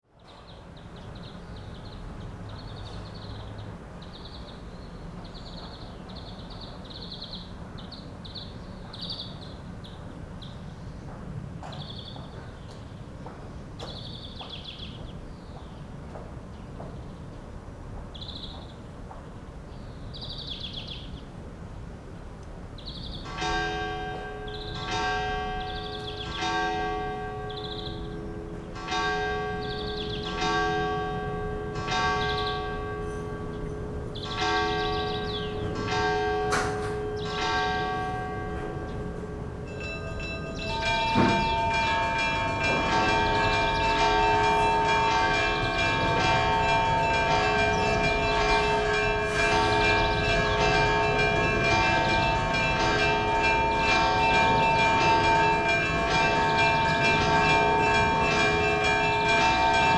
{
  "title": "taormina, via dionisio - morning, balcony, church bells",
  "date": "2009-10-27 07:00:00",
  "description": "hotel continental, almost empty in autumn. morning sounds and bells from the nearby church",
  "latitude": "37.85",
  "longitude": "15.28",
  "altitude": "233",
  "timezone": "Europe/Berlin"
}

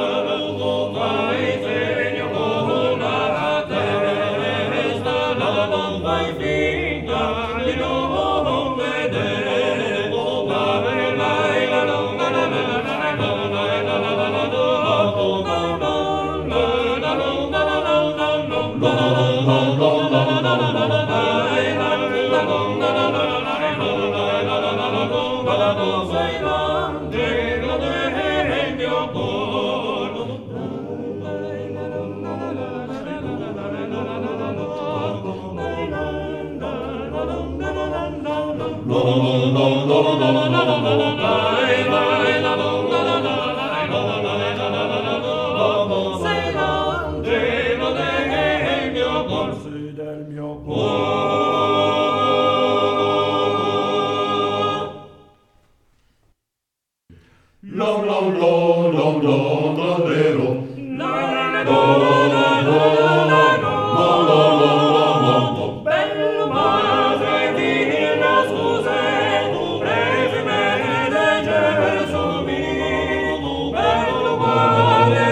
{
  "title": "Sant'Olcese GE, Italia - I Giovani Canterini di Sant'Olcese",
  "date": "2014-11-17 21:30:00",
  "description": "A session of Trallalero, polyphonic chant from Genoa, played by I Giovani Canterini di SantOlcese, a trallalero group. Trallalero is a five-voices chant, without instruments.",
  "latitude": "44.49",
  "longitude": "8.97",
  "altitude": "322",
  "timezone": "Europe/Rome"
}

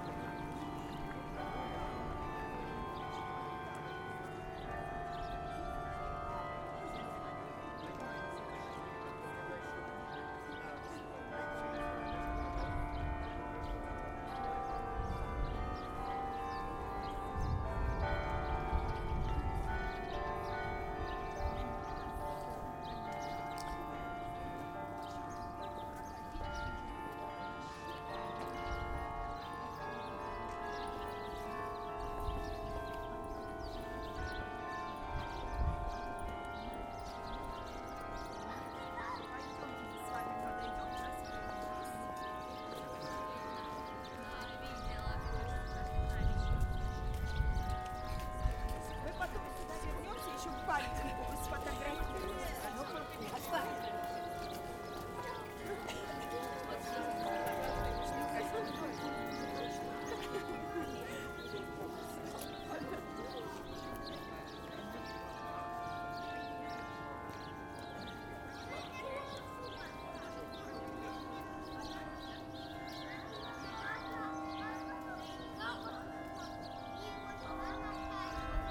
{
  "title": "Peter and Paul Fortress, Saint-Petersburg, Russia - On the Peter and Paul Cathedral square",
  "date": "2015-03-21 11:50:00",
  "description": "SPb Sound Map project\nRecording from SPb Sound Museum collection",
  "latitude": "59.95",
  "longitude": "30.31",
  "altitude": "8",
  "timezone": "Europe/Moscow"
}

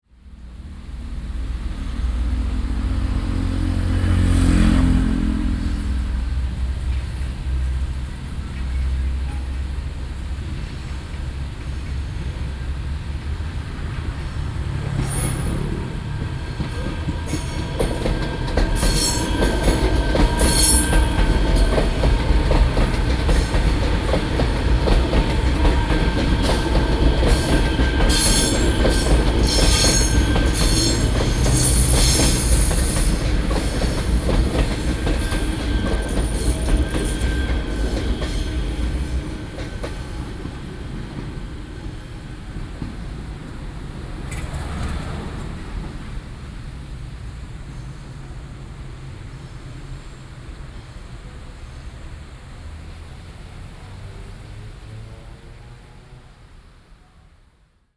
{
  "title": "Keelung, Taiwan - Train traveling through",
  "date": "2012-06-24 18:11:00",
  "description": "Train traveling through, Sony PCM D50 + Soundman OKM II",
  "latitude": "25.13",
  "longitude": "121.74",
  "altitude": "11",
  "timezone": "Asia/Taipei"
}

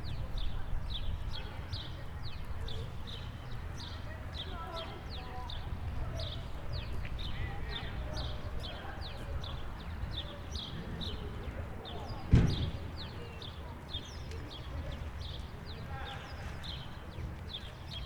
{
  "title": "Oulu City Theatre, Oulu, Finland - Friday evening in front of the City Theatre",
  "date": "2020-06-12 19:43:00",
  "description": "Recorded between the City Theatre and library on a warm summer friday. Lots of people moving towards and from the city. Loud cars and motorbikes going to the parking lot of the library to hang out. Zoom H5 with default X/Y capsule.",
  "latitude": "65.01",
  "longitude": "25.46",
  "altitude": "15",
  "timezone": "Europe/Helsinki"
}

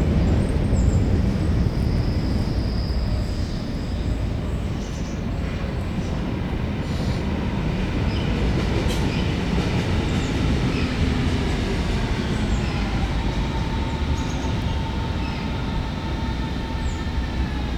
{
  "title": "berlin wall of sound - wollankstr. banhoff, pankow on the mauerweg. j.dickens & f.bogdanowitz",
  "latitude": "52.57",
  "longitude": "13.39",
  "altitude": "43",
  "timezone": "Europe/Berlin"
}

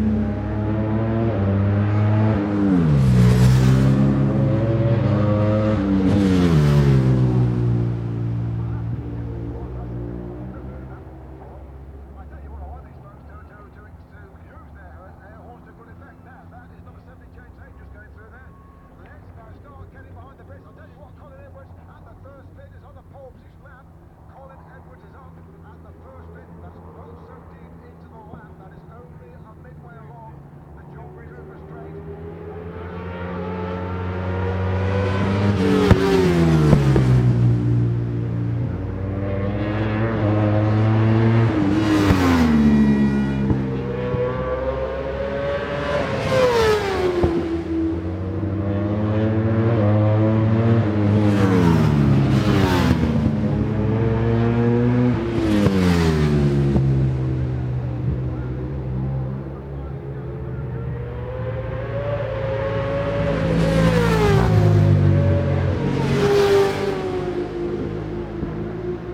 {
  "title": "Brands Hatch GP Circuit, West Kingsdown, Longfield, UK - World Superbikes 2001 ... superbikes ...",
  "date": "2001-07-23 11:30:00",
  "description": "World Superbikes 2001 ... Qualifying ... part two ... one point stereo mic to minidisk ...",
  "latitude": "51.35",
  "longitude": "0.26",
  "altitude": "151",
  "timezone": "Europe/London"
}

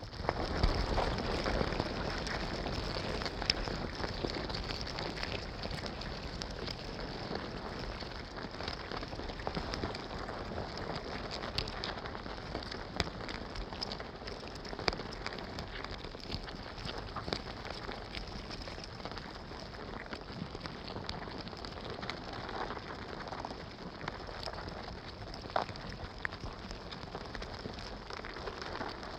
{
  "title": "Wood ants nest, Vogelsang, Zehdenick, Germany - Wood ants explore contact mics placed on their nest",
  "date": "2021-08-25 15:57:00",
  "description": "Wood ants build impressively mountainous nests from forest debris. From it their paths into the surrounding forest radiate outwards in constant activity. Many immediately seethe over objects in the way, e.g. contact mics gently placed on their nest, which they quickly decide are no threat.",
  "latitude": "53.06",
  "longitude": "13.37",
  "altitude": "57",
  "timezone": "Europe/Berlin"
}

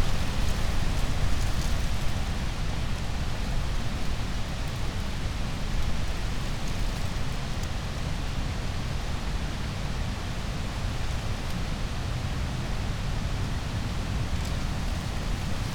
{
  "title": "path of seasons, forest, piramida - pile of brushwood with dry leaves, wind",
  "date": "2014-05-04 16:58:00",
  "latitude": "46.58",
  "longitude": "15.65",
  "altitude": "390",
  "timezone": "Europe/Ljubljana"
}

